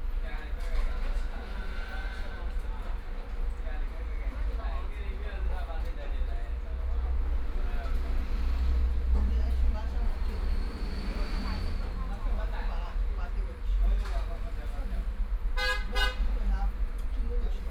Huangpu District, Shanghai - In the restaurant
In the restaurant, Traffic Sound, Binaural recording, Zoom H6+ Soundman OKM II
Shanghai, China, 3 December 2013